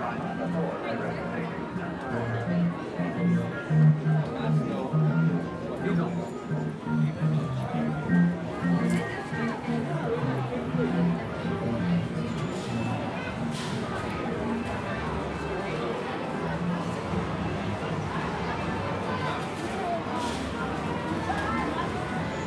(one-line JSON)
{"title": "Brighton Pier, Brighton, United Kingdom - Music, money, and arcade machines", "date": "2015-04-04 11:00:00", "description": "A short trip through the sensory overload of the Brighton Pier arcade hall - pennies and flashing lights everywhere, an assault of holy noise.\n(rec. zoom H4n internal mics)", "latitude": "50.82", "longitude": "-0.14", "altitude": "1", "timezone": "Europe/London"}